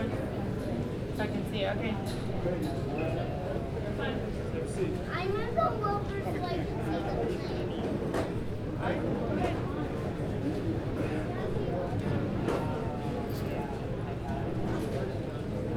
neoscenes: LAX Terminal 1 Gate 10
CA, USA, 15 June 2011